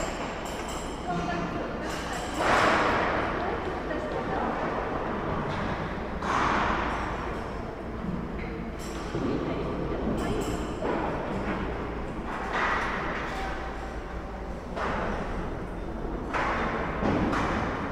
cathedral renovation, Torun Poland
sounds of the renovation work inside the cathedral